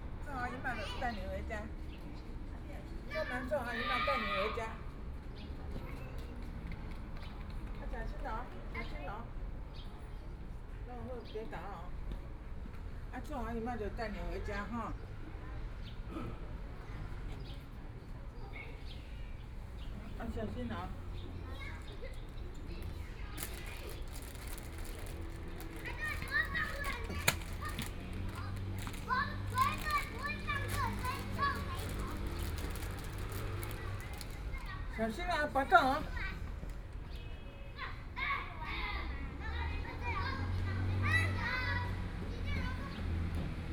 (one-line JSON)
{"title": "DeHui Park, Taipei City - Child", "date": "2014-02-28 18:09:00", "description": "The elderly and children, Children in the play area, in the Park\nPlease turn up the volume a little\nBinaural recordings, Sony PCM D100 + Soundman OKM II", "latitude": "25.07", "longitude": "121.53", "timezone": "Asia/Taipei"}